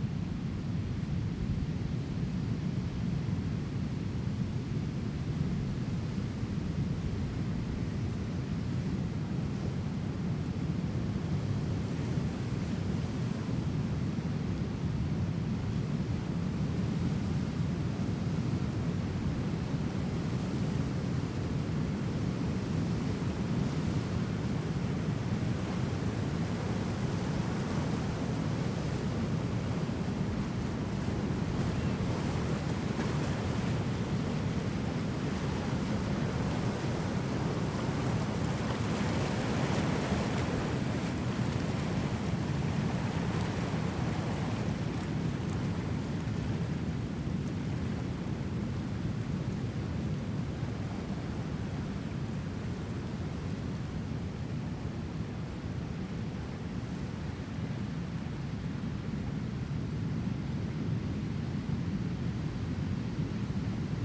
Humboldt County, CA, USA - PETROLIA BEACH, THANKSGIVING DAY 2013
roar of Pacific ocean on the beach in Petrolia, Ca